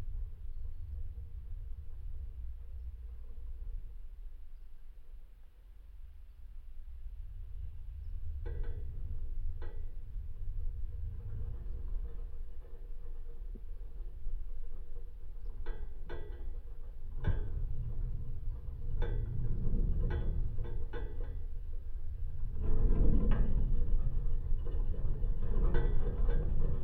Tauragnai, Lithuania, rusty wire
some piece of rusty wire protruding from the ground. contact microphones
Utenos apskritis, Lietuva, 13 June 2020, ~16:00